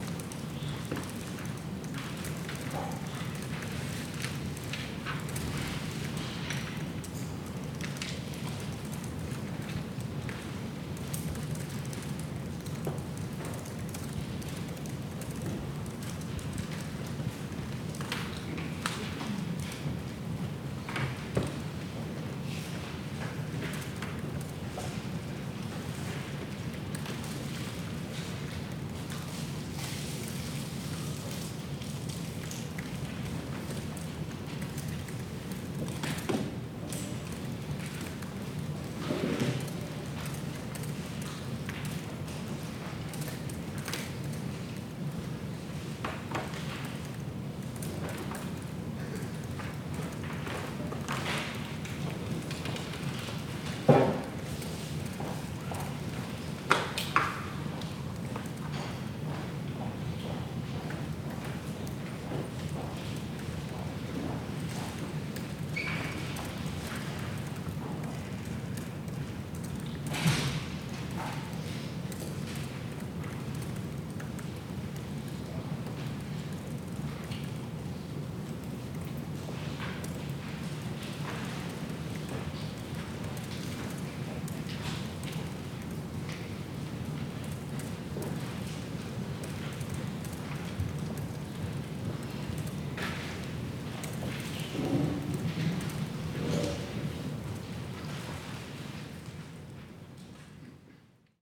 Jacob-und-Wilhelm-Grimm-Zentrum, Campus Mitte, Berlin, Deutschland - Grimm-Zentrum, Berlin - library reading room ambience
Grimm-Zentrum, Berlin - library reading room ambience. [I used the Hi-MD recorder Sony MZ-NH900 with external microphone Beyerdynamic MCE 82]